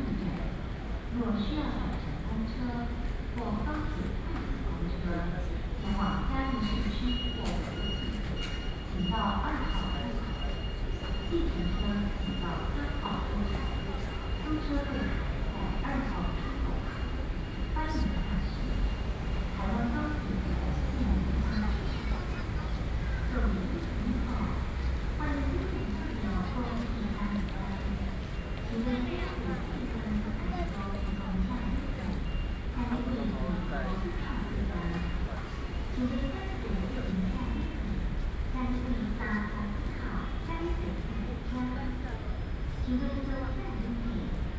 Chiayi Station, THSR, Chiayi County - Station platforms
Train stops, Through trains, Sony PCM D50 + Soundman OKM II
Taibao City, Chiayi County, Taiwan